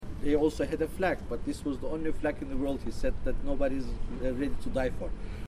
the only flag nobody wants to die for
LIMINAL ZONES, Nikosia, 5-7 Nov 2008.
Mete Hattai on a city tour through the northern (turkish) part of Nikosia about the foundation of the Cyprus republic in 1960
Nicosia Municipality, Κυπριακή Δημοκρατία